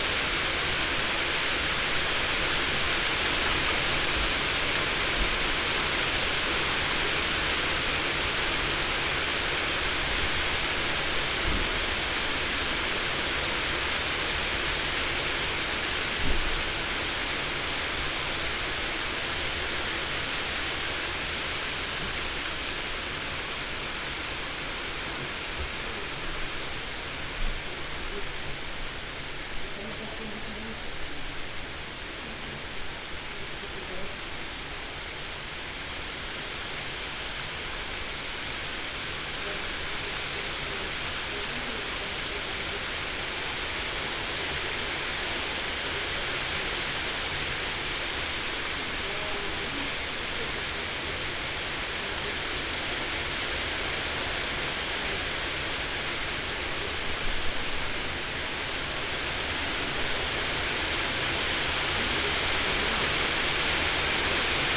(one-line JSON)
{"title": "Каптаруны, Беларусь - Kaptaruni by day", "date": "2015-08-23 14:17:00", "description": "wind in the nearby Sleepy Hollow\ncollection of Kaptarunian Soundscape Museum", "latitude": "55.11", "longitude": "26.26", "altitude": "227", "timezone": "Europe/Vilnius"}